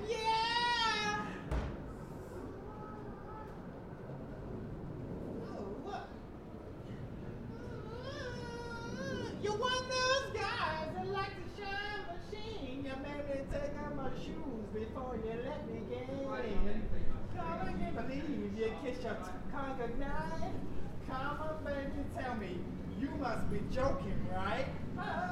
Scarlette Manor Way, Tulse Hill, London, UK - Man Singing - Covid19 Lockdown
Recorded during Covid19 lockdown in the UK, a man would sing outside his everyday this song, around a similar time. Recorded using sony PCMD100
Greater London, England, United Kingdom, 27 March 2020, ~15:00